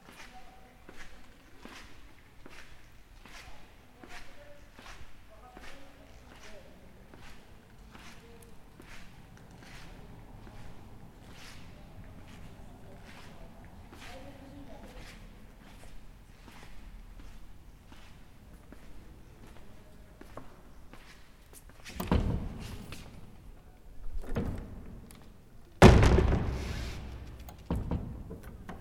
Kirche San Martino, Tirano
Kirch San Martino, Durchgang und Gehen
22 July 2011, 11:55